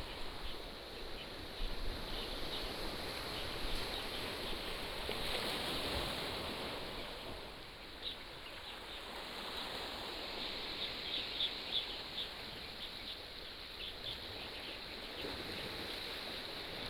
Birds singing, Sound of the waves, In the small marina